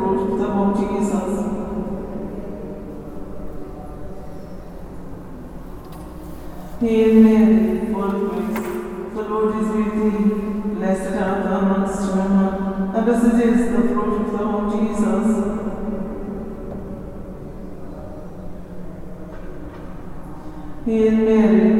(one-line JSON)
{"title": "St.Augustines Church, Washington St, Centre, Cork, Ireland - Thursday Afternoon Rosary", "date": "2019-02-06 15:28:00", "description": "Rosary recital in St. Augustine's Church, Cork. Tascam DR-05.", "latitude": "51.90", "longitude": "-8.48", "altitude": "5", "timezone": "Europe/Dublin"}